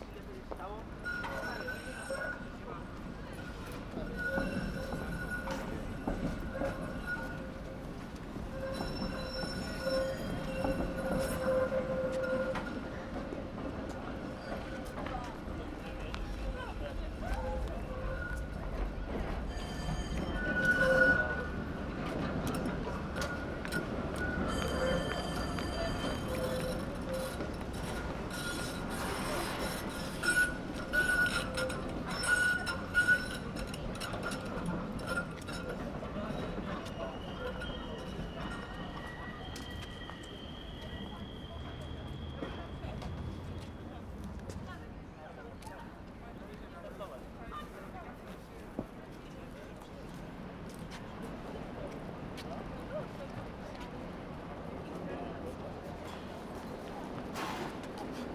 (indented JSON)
{
  "title": "Poznan, Jerzyce district, near Theater Bridge - trams and protesters",
  "date": "2012-11-15 17:54:00",
  "description": "sounds of passing trams, people going in all directions and a few words protesting against price increase of public transportation tickets",
  "latitude": "52.41",
  "longitude": "16.91",
  "altitude": "78",
  "timezone": "Europe/Warsaw"
}